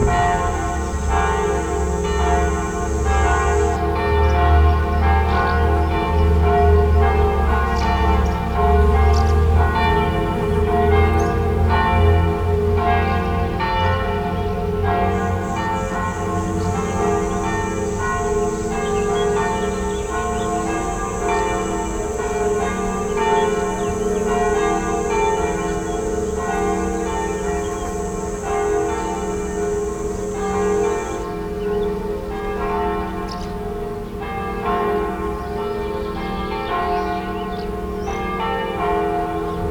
Montignac, Rue Du Calvaire, bees and bells